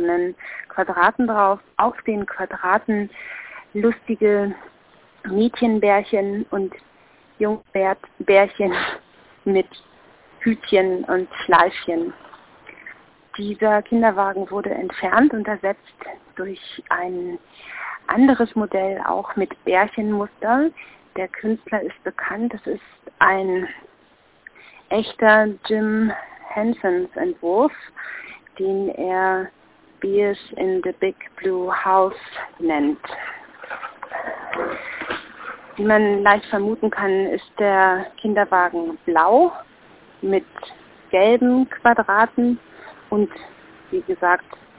{"title": "Telefonzelle, Dieffenbachstraße - Diverse Bärchen 20.08.2007 16:36:15", "latitude": "52.49", "longitude": "13.42", "altitude": "42", "timezone": "GMT+1"}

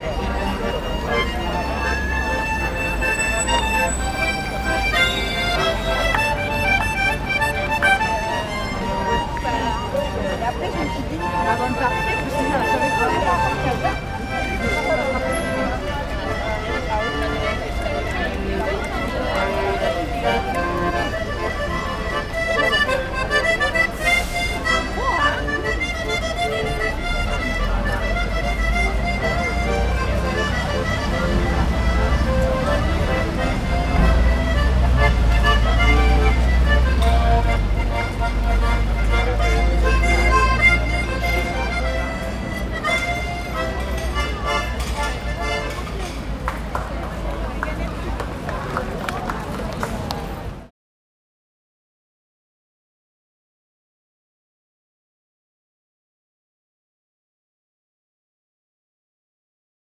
{"title": "Barcelona, Spain - AMB CITY Busy Pedestrian Street, Accordion Player, Tourists, Traffic in BG, Spain", "date": "2018-08-09 15:30:00", "description": "Barcelona, SPAIN\nLa Rambla\nREC: Sony PCM-D100 ORTF", "latitude": "41.38", "longitude": "2.18", "altitude": "5", "timezone": "GMT+1"}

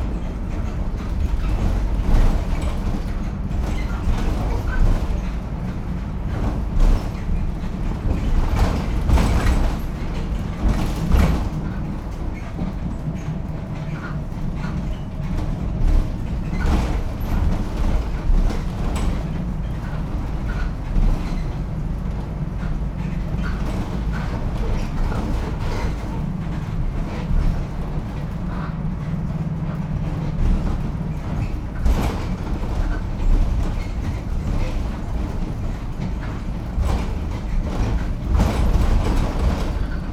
{"title": "Zhongzheng Rd., Tamsui Dist., New Taipei City - Inside the bus", "date": "2012-04-04 08:34:00", "description": "Inside the bus\nSony PCM D50", "latitude": "25.19", "longitude": "121.42", "altitude": "9", "timezone": "Asia/Taipei"}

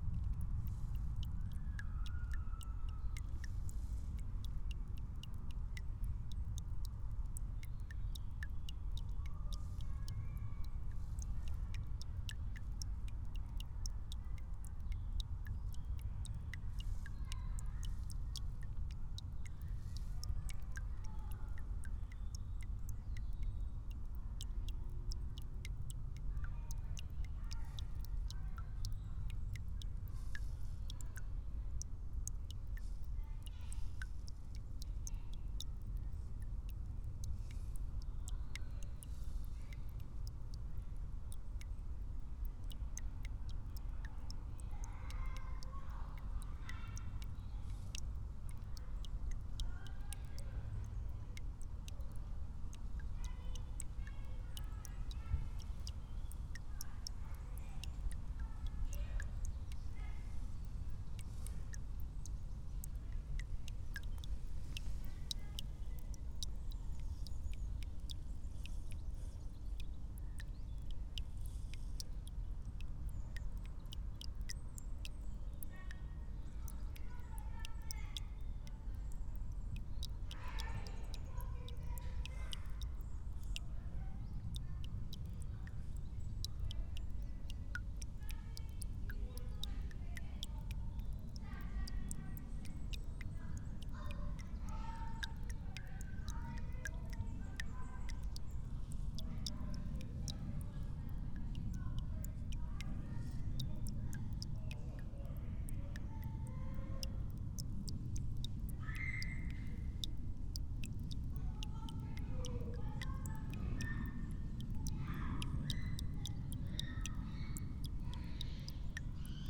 {"title": "Colchester, Colchester, Essex, UK - Water dreaming in a stream", "date": "2015-12-28 15:31:00", "description": "River dripping in a forest, around 3pm - quiet (ish) winter day in december, just after christmas.", "latitude": "51.85", "longitude": "0.89", "altitude": "17", "timezone": "Europe/London"}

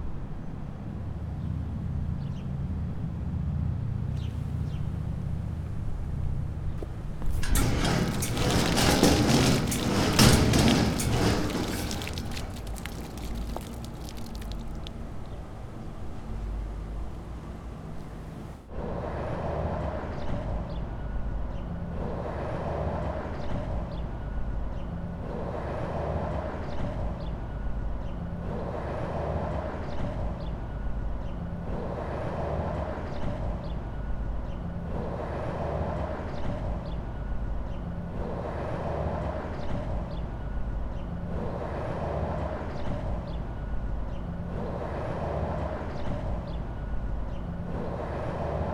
{"title": "Escher Wyss, Zürich, Sound and the City - Sound and the City #08", "date": "2012-07-17 11:05:00", "description": "Eigentümliches Spiel der Fahrbahngeräusche, die sich in einer von übermannshohen, leicht bewachsenen Sandhügeln gesäumten Brache versammeln: Ein Motorrad kündigt sich bereits von weitem an, ohne an ein solches zu erinnern, die Einschwingvorgänge einer Strassenschwelle lassen eine echte Quarte hören, zu der sich das Glissando eines quietschenden Metalls gesellt (zur Verdeutlichung am Ende des Samples geloopt). In der Nähe Vogelgezwitscher – schliesslich das Plätschern des Wassers, das aus dem Handbrunnen Carrons kataraktartig herausstösst.\nArt and the City: Valentin Carron (Ca-Tarac-Ta, 2012)", "latitude": "47.39", "longitude": "8.51", "altitude": "401", "timezone": "Europe/Zurich"}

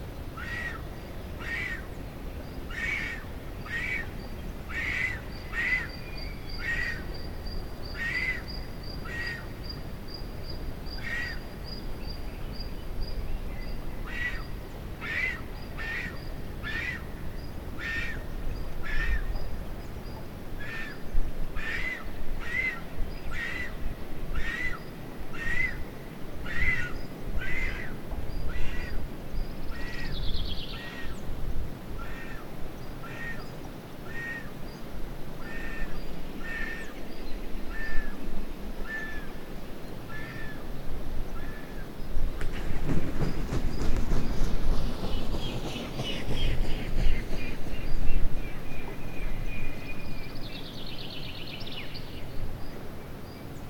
{"date": "2013-05-16", "description": "Recording of a Vulture's nest in La Fuentona, Soria, Spain. May 2013\nLater in the recording a massive vulture takes off from the nest.", "latitude": "41.73", "longitude": "-2.85", "altitude": "1113", "timezone": "Europe/Madrid"}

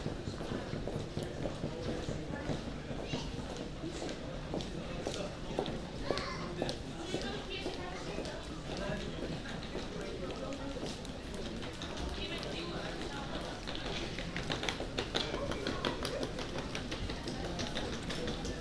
{"title": "Schiphol, Nederland - Corridor on Schiphol", "date": "2014-07-01 10:46:00", "description": "Binaural recording of passengers and employees walking between 'Departures 2' and 'Departures 3'.", "latitude": "52.31", "longitude": "4.76", "timezone": "Europe/Amsterdam"}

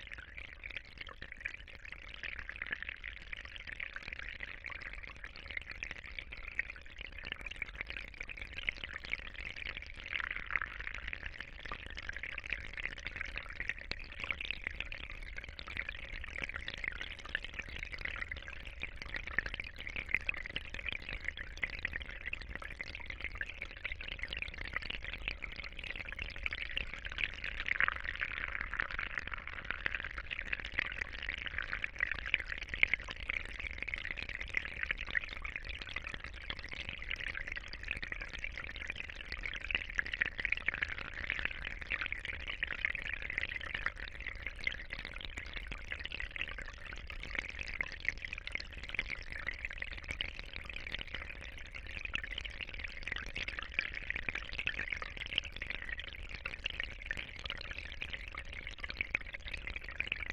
{"title": "Houtrustweg, Den Haag - hydrophone rec of a little stream next to a drain", "date": "2009-05-01 14:30:00", "description": "Mic/Recorder: Aquarian H2A / Fostex FR-2LE", "latitude": "52.09", "longitude": "4.26", "altitude": "5", "timezone": "Europe/Berlin"}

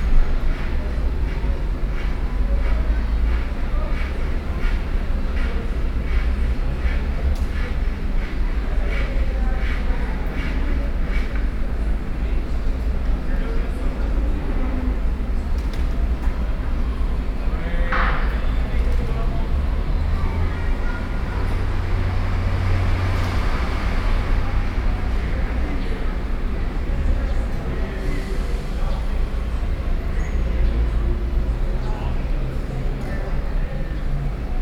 {"title": "Sete, Rue Pons de lHerault", "date": "2011-07-07 15:36:00", "description": "Sète, Rue Pons de lHérault\non the balcony, seagulls in the background.", "latitude": "43.41", "longitude": "3.70", "timezone": "Europe/Paris"}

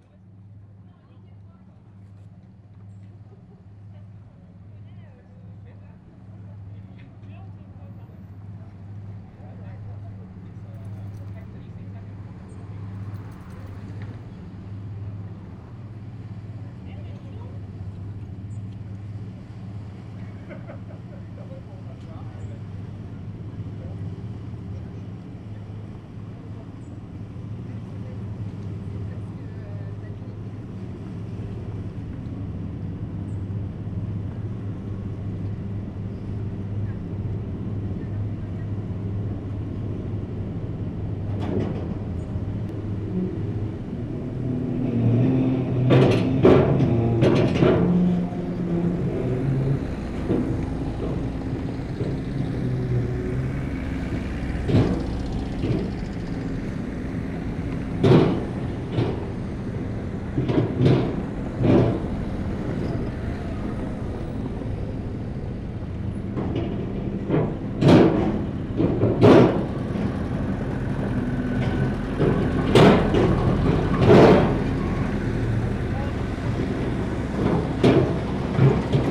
{"title": "Yainville, France - Yainville ferry", "date": "2016-09-17 10:15:00", "description": "The Yainville ferry is charging horses. The animals are very very tensed because of the boat noise.", "latitude": "49.46", "longitude": "0.82", "altitude": "3", "timezone": "Europe/Paris"}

